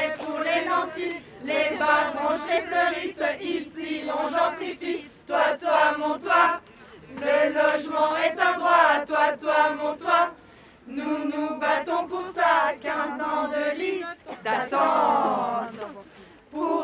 Rue Charles Demeer, Bruxelles, Belgique - a text sung by women in front of a new occupation
There are a lot of empty buildings in Brussels, so we occupy!
Housing is not a commodity, it is a fundamental right
This building was opened by the Campagne de Réquisition Solidaire
And the text of the music given by Angela D, an association where women offer solutions for access to housing for all